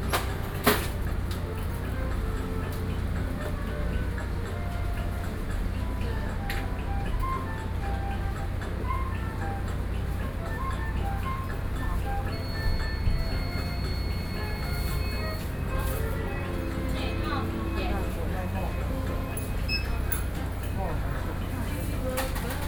Sec., Heping E. Rd., Da’an Dist. - In the convenience store inside
In the convenience store inside
Zoom H4n+ Soundman OKM II